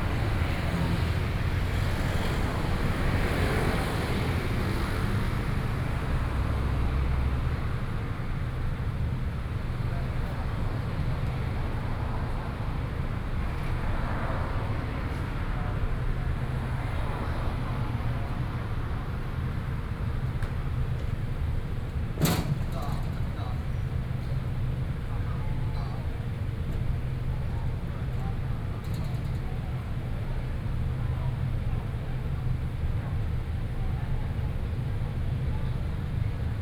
Sec., Zhonghua Rd., Hsinchu City - Intersection
Traffic Noise, Sony PCM D50 + Soundman OKM II
Hsinchu City, Taiwan, 24 September 2013, ~19:00